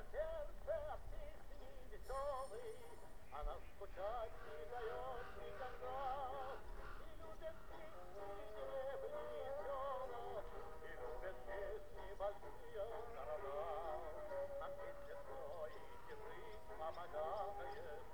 Gruta, Lithuania
in the park of soviet sculptures